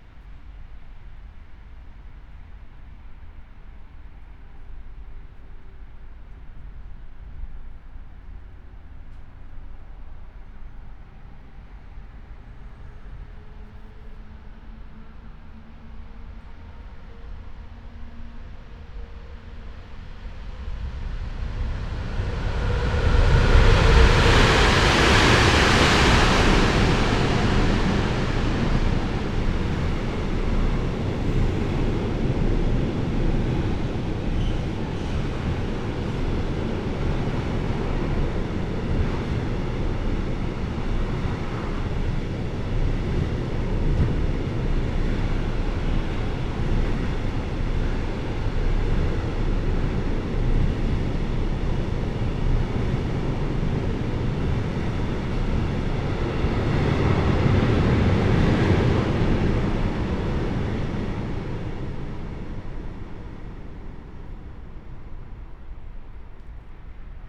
river Traun railway bridge, Linz - under bridge ambience
05:23 river Traun railway bridge, Linz